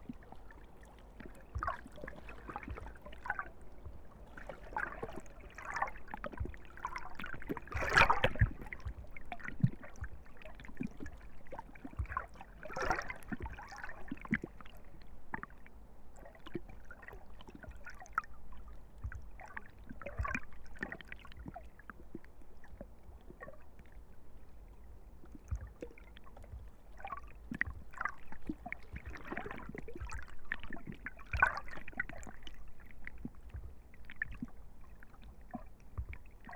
With normal ears it is rather difficult to hear the river Vltava at Braník as traffic noise from the autobahn on the opposite bank continuously drowns out most smaller sounds including water ripples, rowing boats and kayaks. However at some spots mini waves breaking on stones at the river's edge are audible. This track was recorded simultaneously above (normal mics) and below water level (a hydrophone). At the start ripples and traffic noise are heard, which slowly crossfades into the gloopy, slopy underwater world, where the traffic is no longer audible.